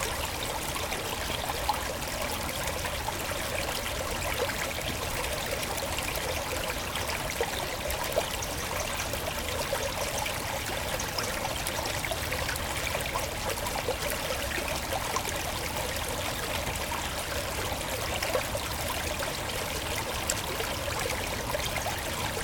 Court-St.-Étienne, Belgique - River Ry Sainte-Gertrude
A small river, called "Ry Ste-Gertrude". This is recorded during windy times.